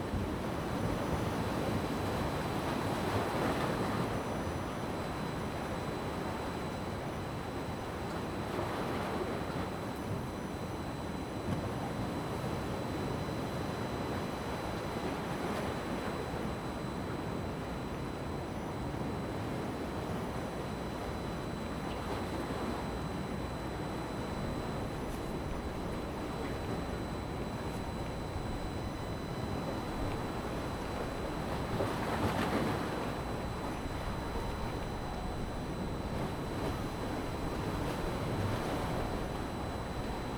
Sound of the waves, On the bank
Zoom H2n MS+XY
Gushan District, Kaohsiung City, Taiwan, 22 November 2016